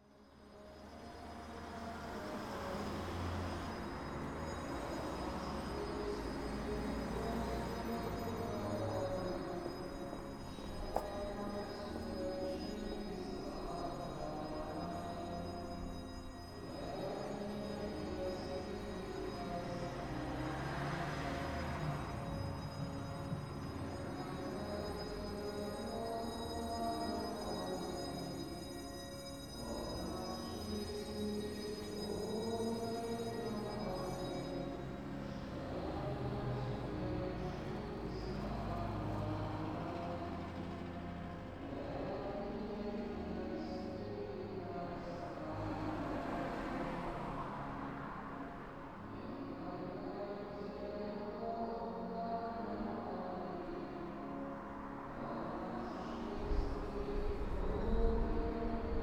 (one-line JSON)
{"title": "Lida, Belarus, at the church", "date": "2015-08-01 08:40:00", "latitude": "53.89", "longitude": "25.30", "altitude": "145", "timezone": "Europe/Minsk"}